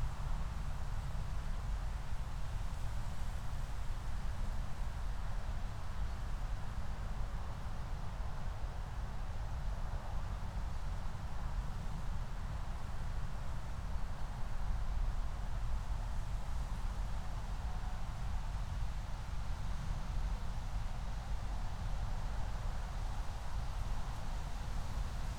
Moorlinse, Berlin Buch - near the pond, ambience
09:19 Moorlinse, Berlin Buch
Deutschland, December 2020